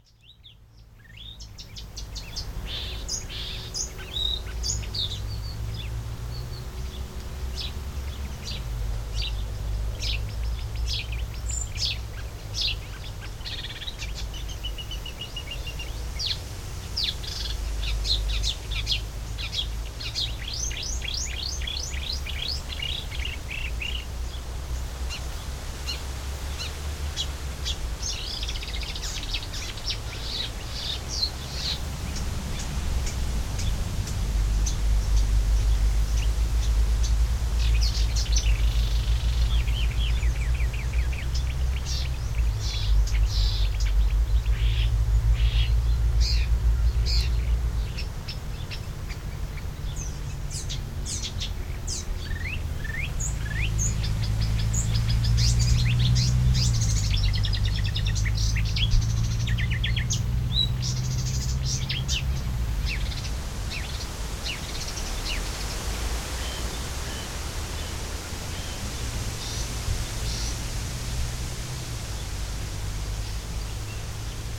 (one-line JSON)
{"title": "Savoie Technolac, Le Bourget du Lac 73370 France - Buisson chantant.", "date": "2022-06-20 11:45:00", "description": "Un hypolaïs polyglotte chante dans un buisson agité par le vent, quelques bruits de la circulation automobile. Sous un soleil de plomb je m'abrite sous un parapluie multicolore qui peut aussi servir d'abri antivent pour les micros sur pied.", "latitude": "45.64", "longitude": "5.88", "altitude": "234", "timezone": "Europe/Paris"}